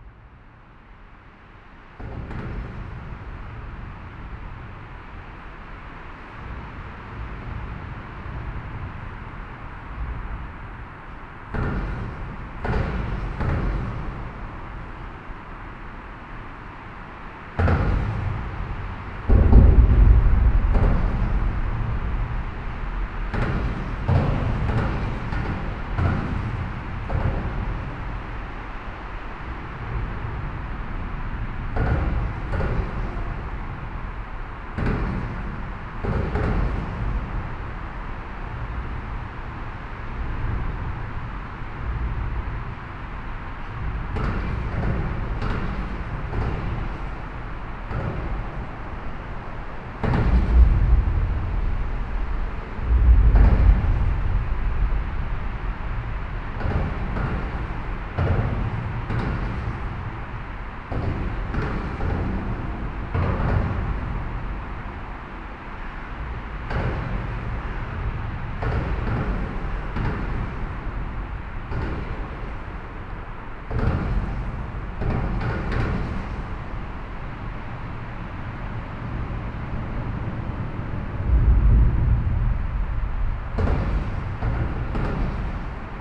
Bruxelles, Belgium - Vilvoorde viaduct
Sound of the Vilvoorde viaduct below the bridge.